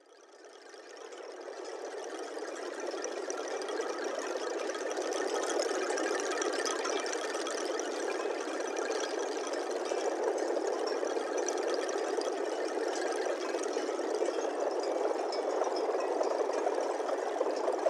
Harmonic Fields, Laby, Long Eks
Long straps tensioned and across the wind.
French artist and composer, Pierre Sauvageot (Lieux publics, France) created a a symphonic march for 500 aeolian instruments and moving audience on Birkrigg Common, near Ulverston, Cumbria from 3-5 June 2011. Produced by Lakes Alive
500 Aeolian instruments (after the Greek god, Aeolus, keeper of the wind) were installed for 3 days upon the common. The instruments were played and powered only by the wind, creating an enchanting musical soundscape which could be experienced as you rest or move amongst the instruments.
The installation used a mixture of conventional and purpose built instruments for example, metal and wood cellos, strings, flutes, Balinese scarecrows, sirens, gongs, harps and bamboo organs. They were organised into six sections, each named after different types of winds from around the world. The sounds that they created, and the tempo of the music, depended entirely upon the strength and the direction of the wind.
Cumbria, UK, 3 June 2011